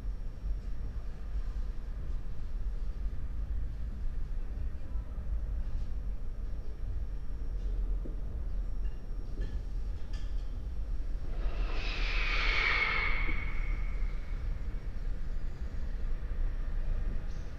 Berlin Bürknerstr., backyard window - backyard ambience /w bells
backyard ambience, churchbells, a few firecrackers
(raspberry Pi Zero + IQaudio Zero + 2x PUI AOM 5024)
31 December 2020, 16:00